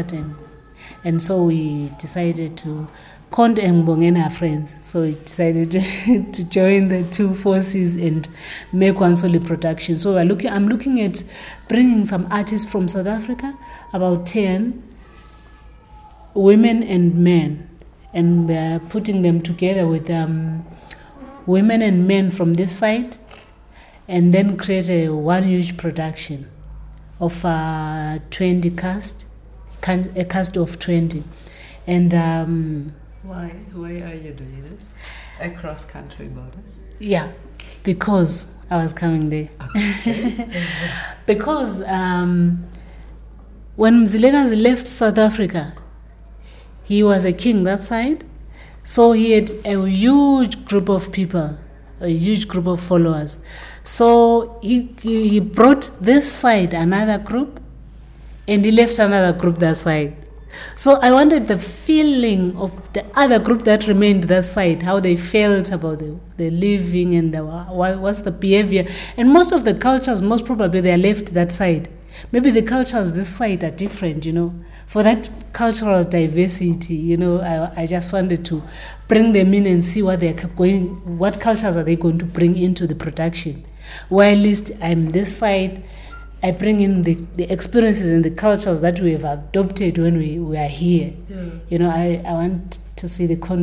Amakhosi Cultural Centre, Makokoba, Bulawayo, Zimbabwe - Thembi Ngwabi talks history in her new production…
I had been witnessing Thembi training a group of young dancers upstairs for a while; now we are in Thembi’s office, and the light is fading quickly outside. Somewhere in the emptying building, you can still hear someone practicing, singing… while Thembi beautifully relates many of her experiences as a women artist. Here she describes to me her new production and especially the history it relates…
29 October 2012, 18:11